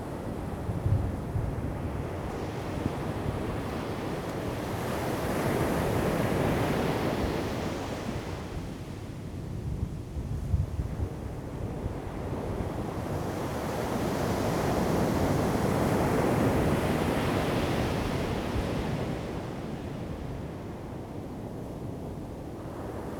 Sound of the waves, Traffic Sound, Thunder
Zoom H2n MS+XY
Taitung County, Taiwan, 8 September 2014, 14:21